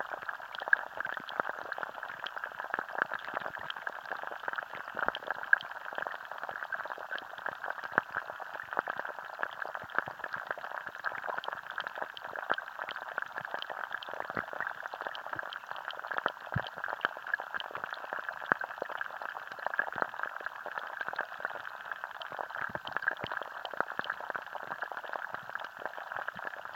Anykščiai, Lithuania, river underwater
hydrophones in river's flora
Anykščių rajono savivaldybė, Utenos apskritis, Lietuva, 1 August